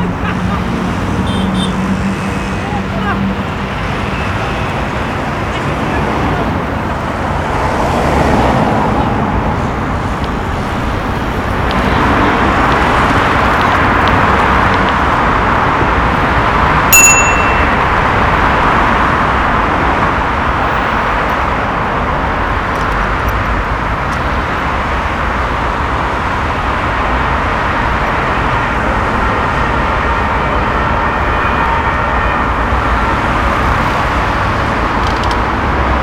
Avenue de la République, Bagnolet, France - Plan fixe